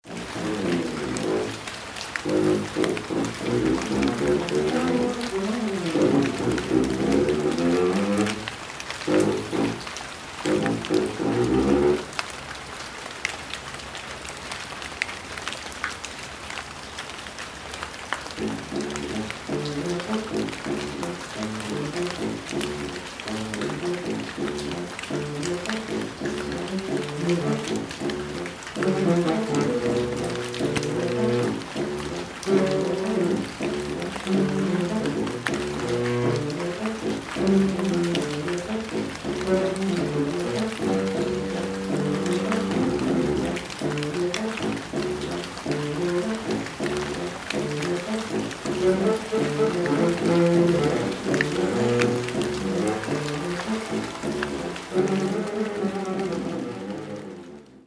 rain + rehearsal
rain on leaves, saxophone rehearsal.
recorded oct 16th, 2008.